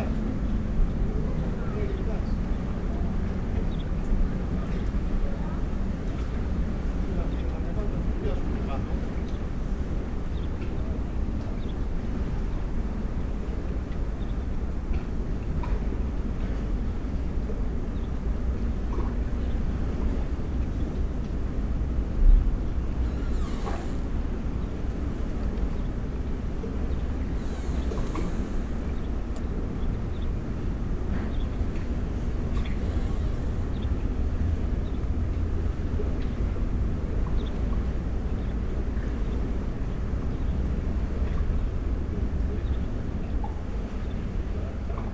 Kissamos, Crete, at the abandoned ship
standing at the abandoned ship